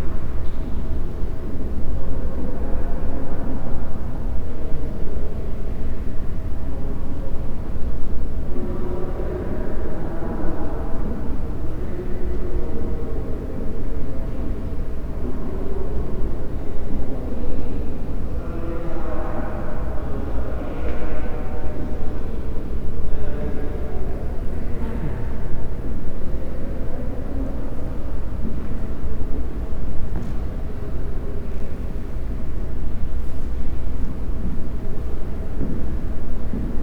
Prol, C. Calz. de los Heroes, La Martinica, León, Gto., Mexico - Museo de Arte e Historia de Guanajuato, sala Luis García Guerrero.
An everyday day in the Luis García Guerrero room, of the Museum of Art and History of Guanajuato. People are heard walking past the current exhibit and commenting on it. Also one of the guides gives information to a group of visitors.
I made this recording on june 3rd, 2022, at 1:27 p.m.
I used a Tascam DR-05X with its built-in microphones and a Tascam WS-11 windshield.
Original Recording:
Type: Stereo
Un día cotidiano en la sala Luis García Guerrero, del Museo de Arte e Historia de Guanajuato. Se escucha la gente pasando por la exposición actual y comentando al respecto. También a uno de los guías dando información a un grupo de visitantes.
Esta grabación la hice el 3 de junio de 2022 a las 13:27 horas.
Guanajuato, México